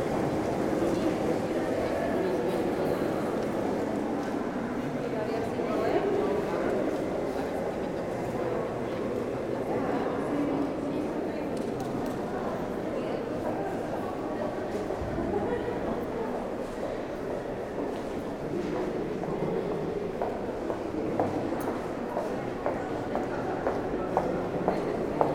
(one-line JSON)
{"title": "Brugge, België - Brugge station", "date": "2019-02-16 10:50:00", "description": "At the Bruges station. Passengers are in a hurry : sound of the suitcases on the cobblestones. Entering into the station, intense reverberation in the reception hall. A person explains what to do to tourists. Walking to the platforms, intercity trains upcoming. Supervisors talking and some announcements.", "latitude": "51.20", "longitude": "3.22", "altitude": "9", "timezone": "Europe/Brussels"}